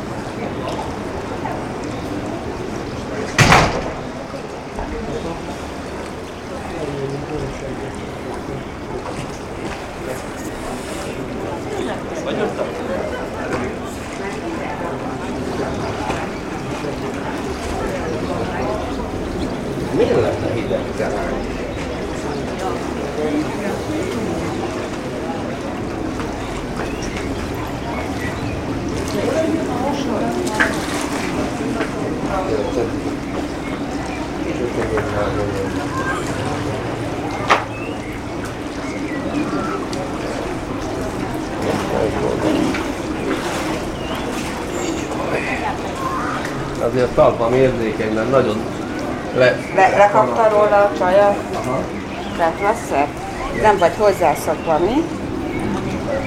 Hevitz, Hevitz Lake, Preparing the bath
Hévíz, Hungary, 27 July 2010